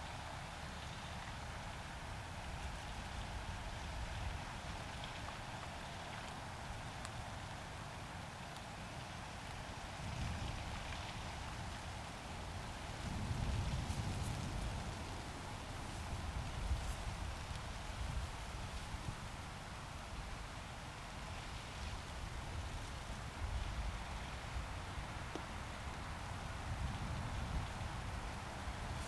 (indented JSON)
{
  "title": "Rain and wind in our tent - Right next to the river Caldew",
  "date": "2020-09-08 20:34:00",
  "description": "Recorded using LOM Mikro USI's and a Sony PCM-A10.",
  "latitude": "54.68",
  "longitude": "-3.05",
  "altitude": "293",
  "timezone": "Europe/London"
}